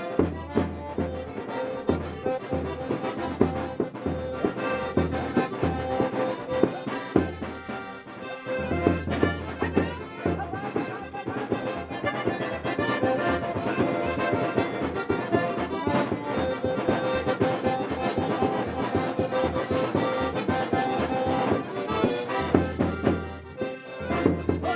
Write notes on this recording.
Madagasiraka-song ands dance by old palace. One dancer was dressed up like a turkey